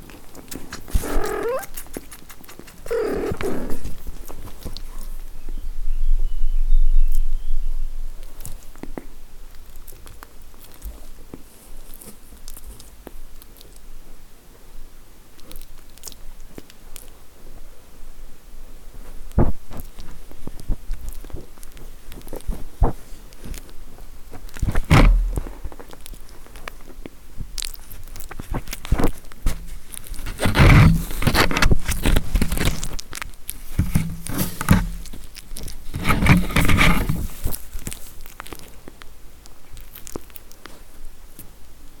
Średniak, Szczawnica, Poland - (180) Kittens attack recorder
Recording of kittens left with a recorder.
województwo małopolskie, Polska, 9 July, 10:53